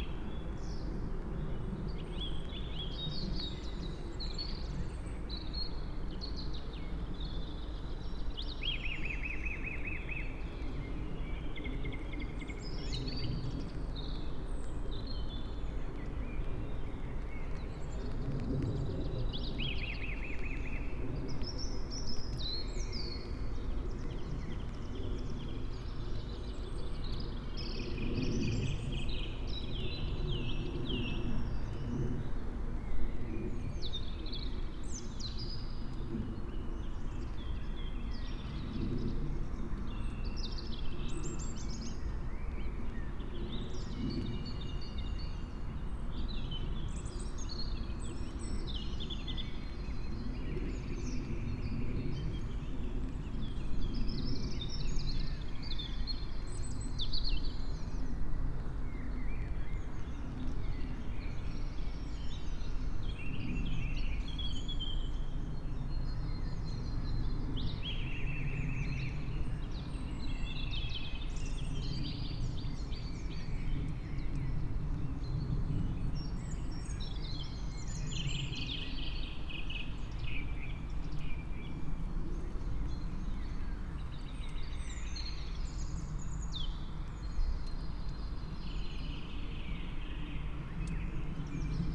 inside the pool, mariborski otok - with umbrella, rain stops
grey clouds feel heavy ... slowly passing above the old trees; lowered veils had stuck inside the naked crowns
Mariborski otok - površinsko geomorfološki in botanični naravni spomenik, Kamnica, Slovenia